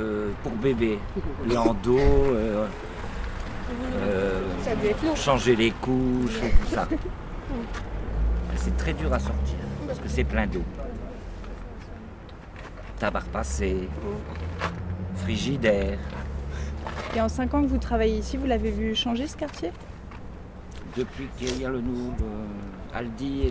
Quai de Rouen, Roubaix, France - Léquipe dentretien du canal
Interview de Didier qui travaille à l'entretien et au nettoyage du canal